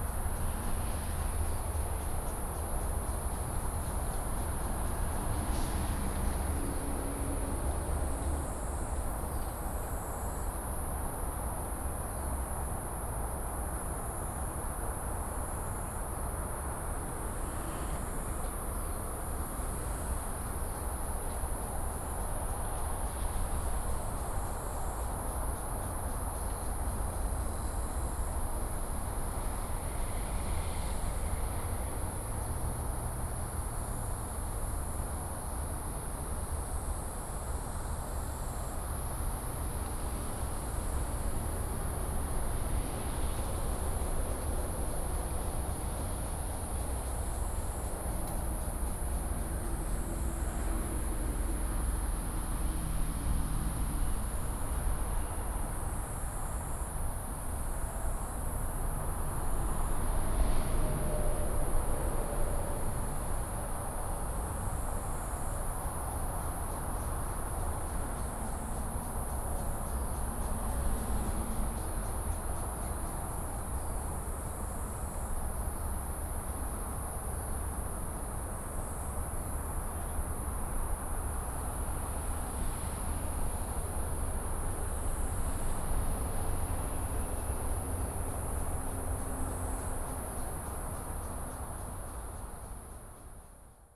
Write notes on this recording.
Cicada cry, traffic sound, In the bamboo edge